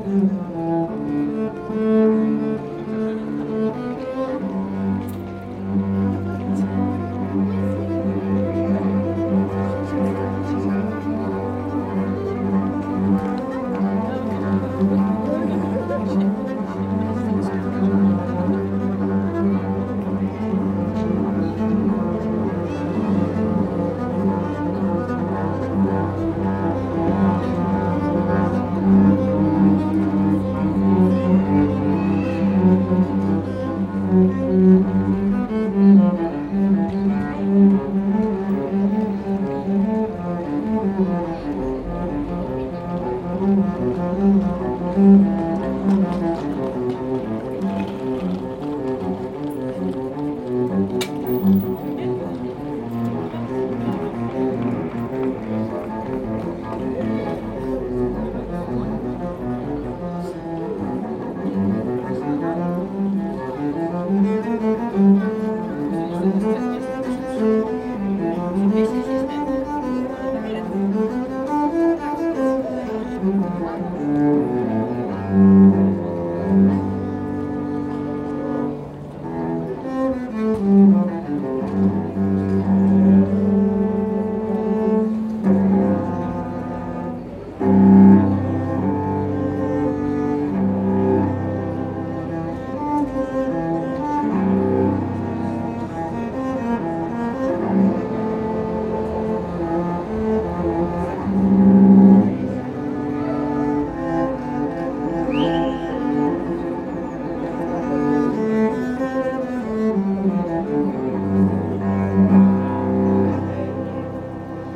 Gent, België - Street cellist
Sint-Baafsplein. A good cello player. That's a good-lookin' city right there Ghent !